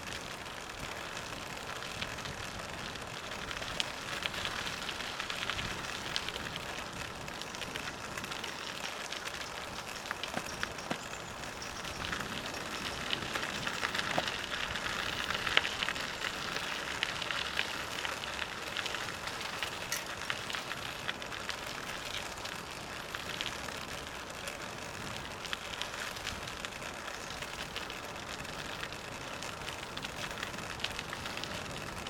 bij Bea
fietsend door het Haagse Bos